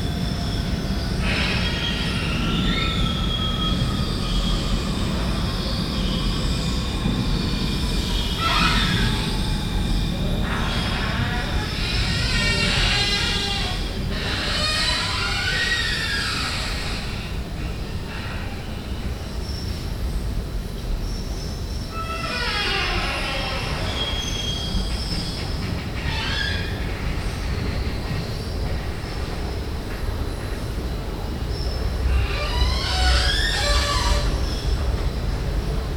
{"title": "Zuidwal, Den Haag, Nederland - Creaking Escalator", "date": "2015-07-14 20:06:00", "description": "It's a creaking escalator... as you can hear. Binaural recording made in the Parking Grote Markt.", "latitude": "52.08", "longitude": "4.31", "altitude": "11", "timezone": "Europe/Amsterdam"}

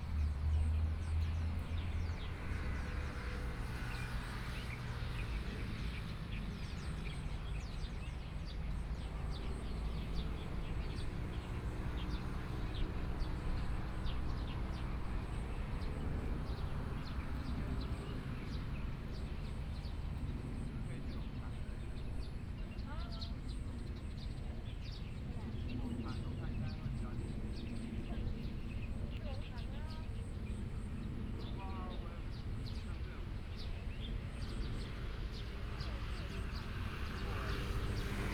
{"title": "宜蘭運動公園, Yilan City - in the Park", "date": "2014-07-26 10:41:00", "description": "in the Park, Traffic Sound, Birds\nSony PCM D50+ Soundman OKM II", "latitude": "24.74", "longitude": "121.76", "altitude": "7", "timezone": "Asia/Taipei"}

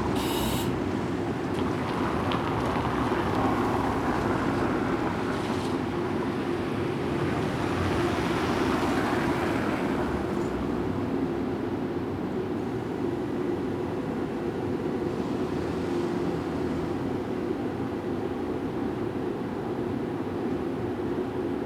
{"date": "2013-03-18 17:06:00", "description": "recorded with KORG MR-2, in front of a building", "latitude": "52.06", "longitude": "4.34", "altitude": "1", "timezone": "Europe/Amsterdam"}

